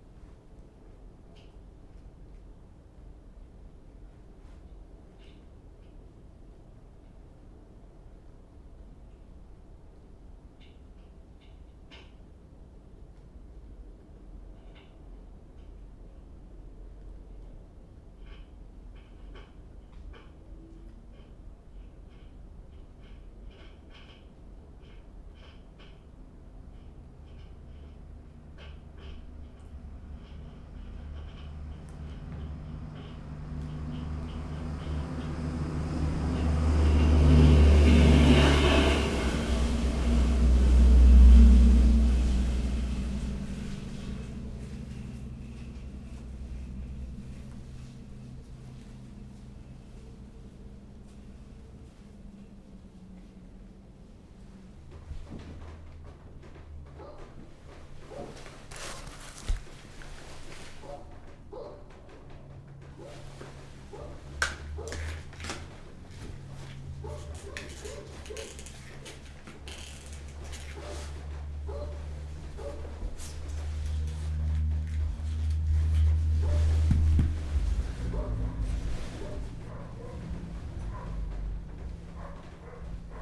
3136 Rosa Parks
Rubbing ash from a house fire. Two condenser mics and a contact mic through a bullhorn.